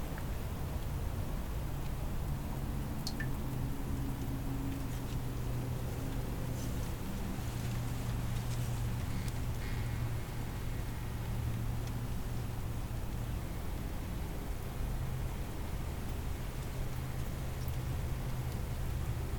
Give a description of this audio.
Constitution Marsh Audubon Center and Sanctuary. Sound of reeds, water, and the Metro-North train. Zoom h6